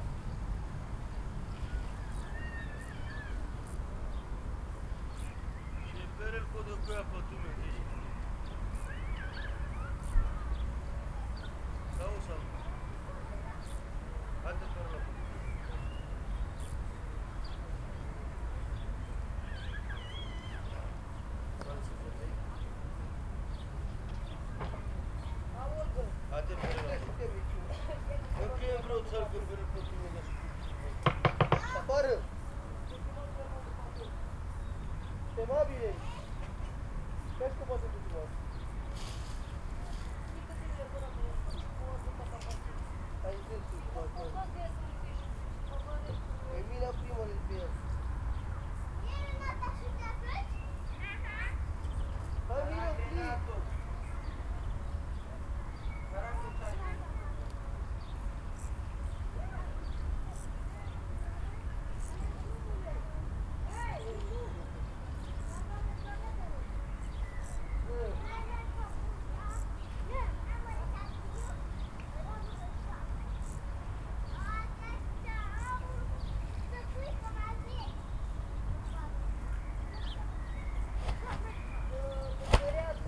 the overgrown gardens alongside S-Bahn / mobile concrete factory are abandoned since a few years, due to the construction of the A100 motorway, which most probably starts soon. however, there seem to live families in the shacks, a woman is washing cloth, children are playing in the green. i could not identify their language.
(Sony PCM D50, DPA4060)
Berlin, Deutschland, European Union, 7 July 2013, ~18:00